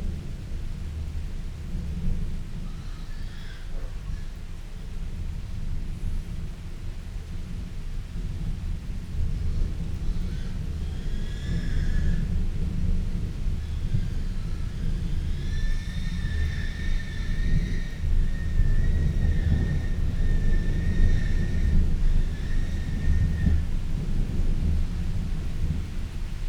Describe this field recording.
inside church porch ... outside thunderstorm ... open lavalier mics on T bar on mini tripod ... background noise traffic and pigs from an adjacent farm ... which maybe a bit off putting ... bird calls ... blue tit ... wood pigeon ...